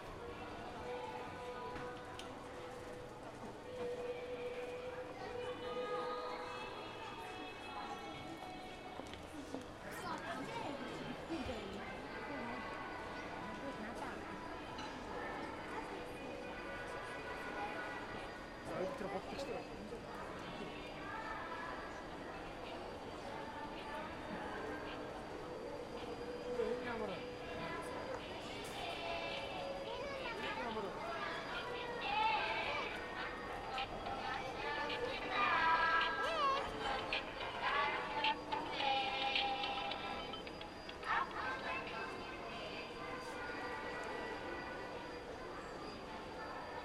Khoroo, Ulaanbaatar, Mongolei - children's day in front of the state department store
children on stage, clowns coming, playing silly music and dance in formation, walk away in the department store